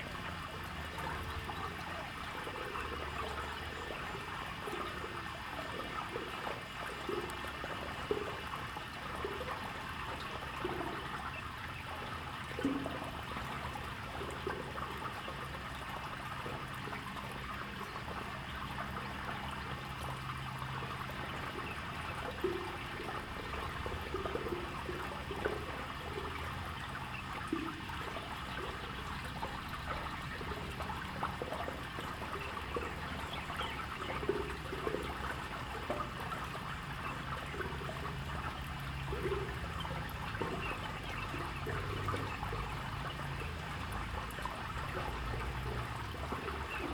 紙教堂體驗廚房, 桃米里Puli Township - Irrigation channels
Irrigation channels, Birds sound, Flow sound
Zoom H2n MS+XY
Nantou County, Puli Township, 水上巷, 7 June 2016, 15:41